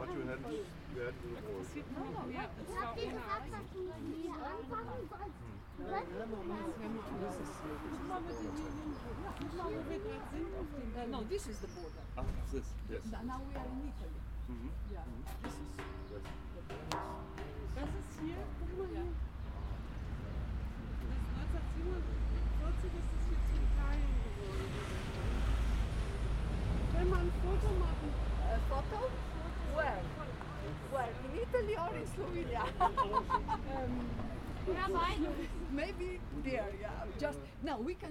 Trg Evrope/Piazza Transalpina - Random tourists conversation
Random tourists conversation with one leg in Slovenia and the other in Italy